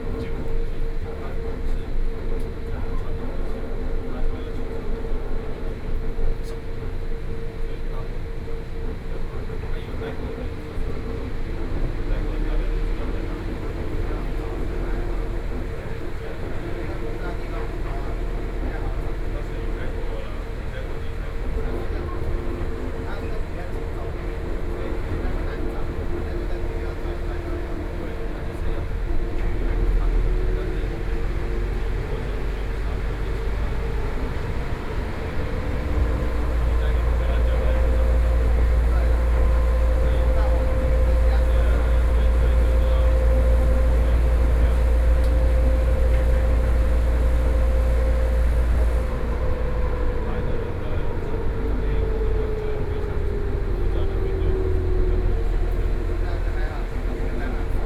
Taipei Metro Brown Line
from Songshan Airport Station to Xihu Station, Sony PCM D50 + Soundman OKM II
台北市 (Taipei City), 中華民國, 9 July, 16:18